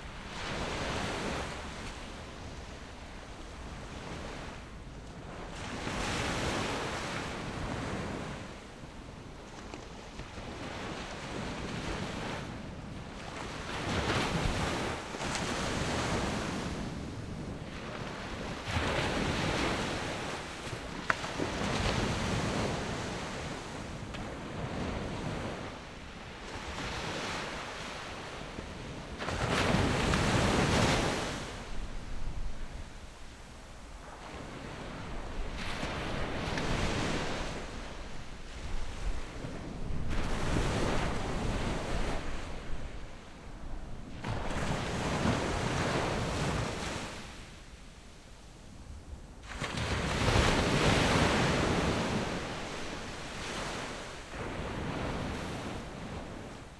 Sound wave, In the beach
Zoom H6 +Rode NT4
15 October, 14:11